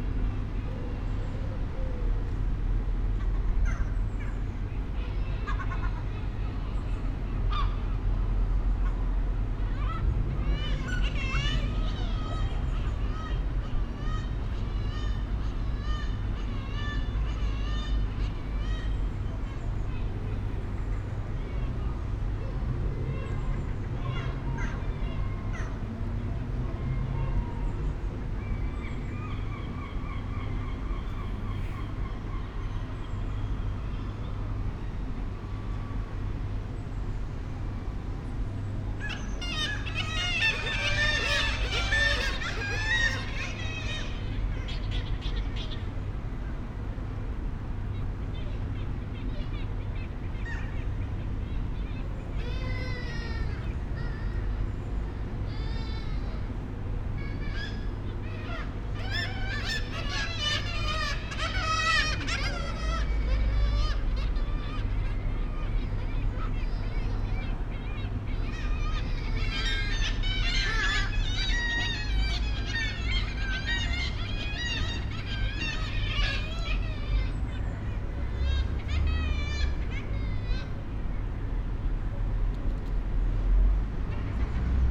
St Nicholas Cliff, Scarborough, UK - kittiwakes at the grand hotel ...
kittiwakes at the grand hotel ... kittiwake colony on the ledges and window sills at the back of the hotel ... SASS to Zoom H5 ... bird calls from herring gull ... jackdaw ... blue tit ... goldfinch ... background noise ... air conditioning ... traffic ... the scarborough cliff tramway ... voices ... a dog arrived at one point ... 20:12 two birds continue their squabble from a ledge and spiral down through the air ...
Yorkshire and the Humber, England, UK